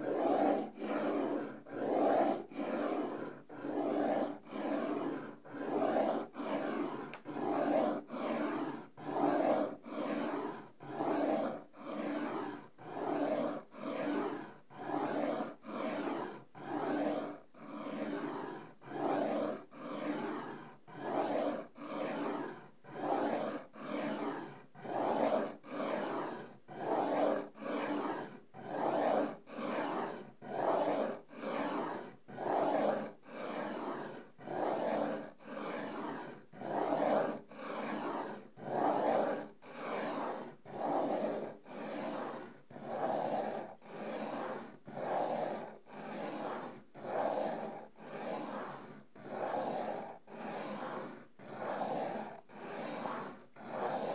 Minvaud Upper, Co. Carlow, Ireland - drawing number 16
recording made while making drawing number 16 pen on paper
11 November 2014, ~13:00